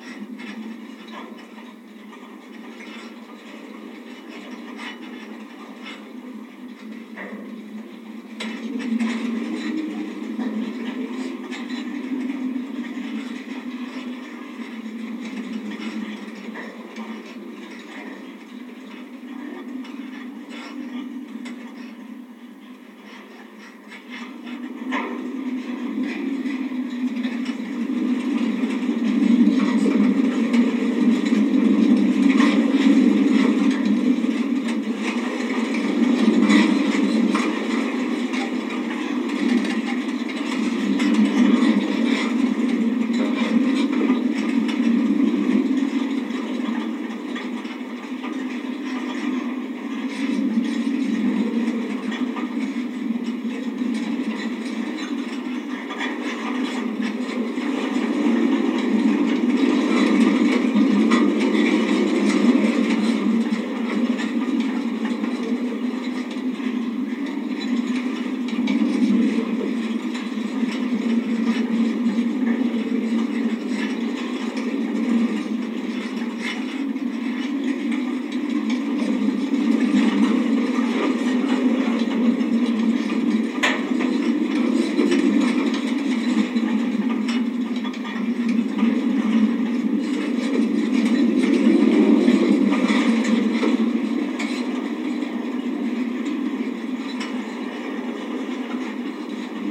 Mathry, Wales, UK - Llangloffan Fen:Wind & Wire Fence
Recorded at Llangloffan Fen Nature Reserve using a Zoom H4 & two home made contact mics clipped to the fence. Weather conditions were overcast & humid with a moderate wind & frequent gusts- which, together with surrounding grass, interact with the fence.